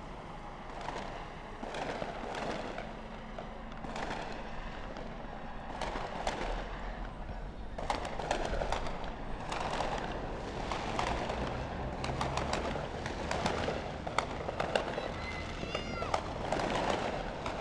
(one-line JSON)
{"title": "Wyckoff Ave, Brooklyn, NY, USA - Ridgewood/Bushwick 4th of July Celebration", "date": "2019-07-04 21:20:00", "description": "Ridgewood/Bushwick 4th of July Celebration.", "latitude": "40.70", "longitude": "-73.91", "altitude": "21", "timezone": "America/New_York"}